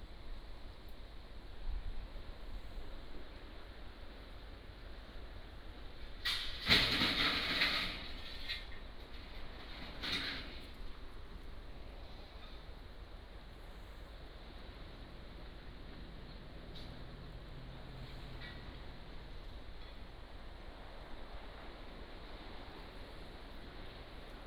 夫人村, Nangan Township - Near the sea
Sound of the waves, Housing renovation, Standing on the rocky shore